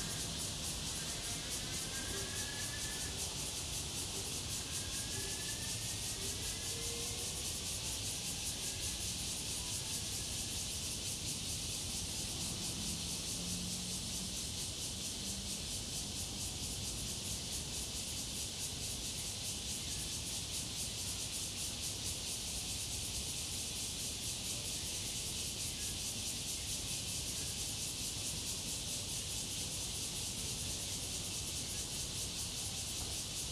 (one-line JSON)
{"title": "Xinlong Park, Da’an Dist. -, Cicadas cry and Birdsong", "date": "2015-06-28 18:49:00", "description": "in the Park, Cicadas cry, Bird calls, Traffic Sound\nZoom H2n MS+XY", "latitude": "25.03", "longitude": "121.54", "altitude": "19", "timezone": "Asia/Taipei"}